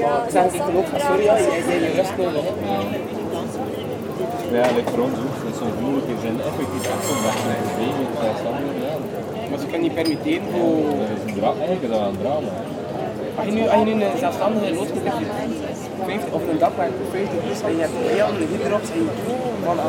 Maastricht, Pays-Bas - Main square of Maastricht
On the main square of Maastricht, people drinking coffee, tea and beer on the bar terraces.